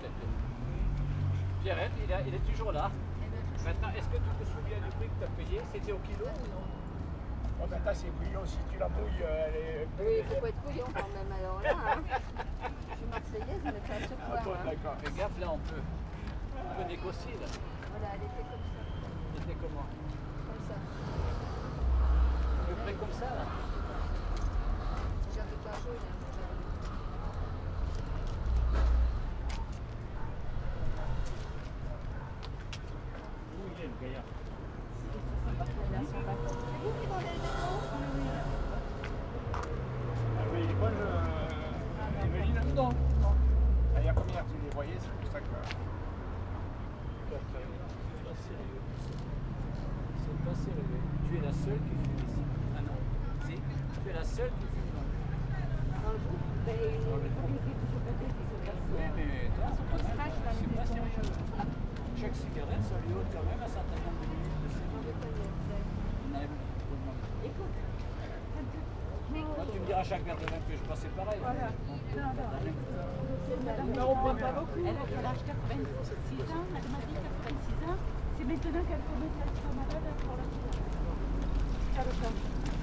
Heraklion Yacht Port - french tourists
a group of french tourists bargaining for sponges with a greek sea fisherman. they are very interested to purchase his items but no deal is done.